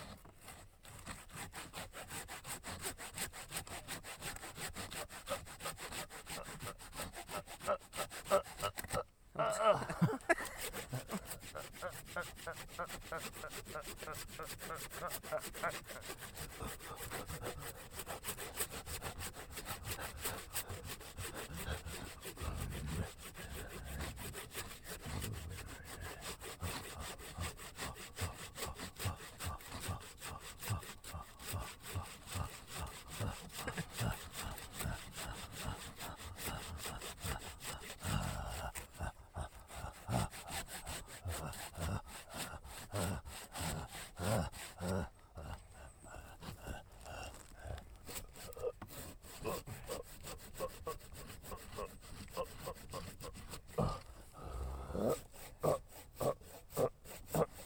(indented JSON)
{
  "title": "cutting wood - harvesting the 'decapitated face' during the KODAMA residency",
  "date": "2009-10-20 12:34:00",
  "description": "we found a beautiful formation of wood here on a stump, and proceeded to 'extract' it by sawing it off - Recording made during KODAMA residency at La Pommerie Sept 2009",
  "latitude": "45.68",
  "longitude": "2.13",
  "altitude": "841",
  "timezone": "Europe/Berlin"
}